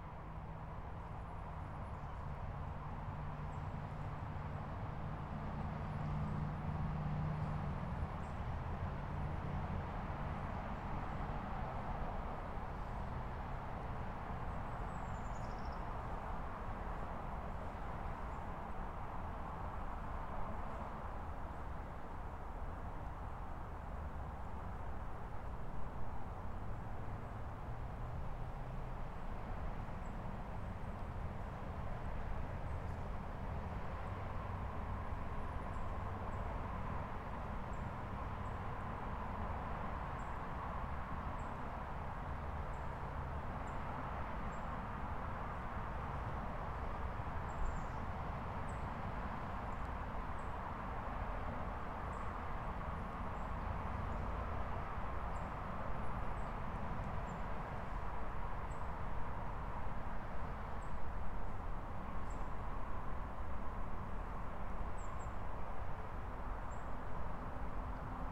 Wetlands area and elevated boardwalk, Heritage Park Trail, Smyrna, GA, USA - Wetland Boardwalk
An ambience recording made on the side of a boardwalk over some wetlands. It's winter, so wildlife activity is minimal. There were some squirrels rustling in the leaves, as well as some birds. It's a nice place, but there's a busy road off to the right side that produces lots of traffic sounds. EQ was done in post to reduce the traffic rumble.
[Tascam Dr-100mkiii w/ Primo Em-272 Omni mics]